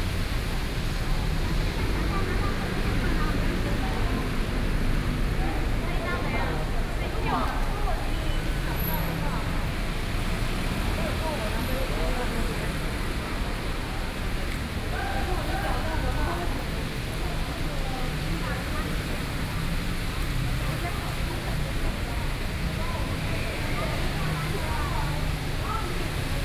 Mingde Station, Beitou District - Rainy Day
Station hall entrances, Traffic Noise, Sony PCM D50 + Soundman OKM II